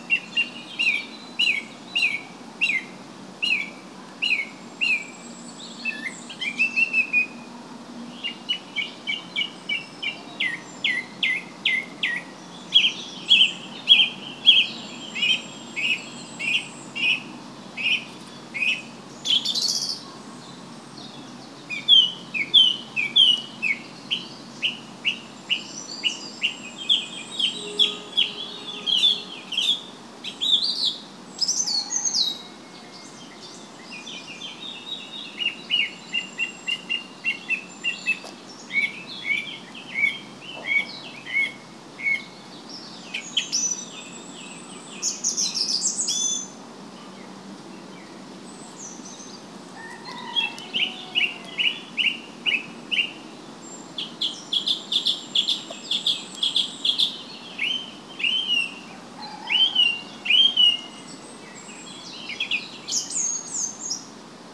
R. Padre Manuel Valente Pinho Leão, Vila Nova de Gaia, Portugal - parque da lavandeira
Parque da Lavandeira in Vila Nova de Gaia, recording birds with a Sony M10.
Porto, Portugal, 6 May 2021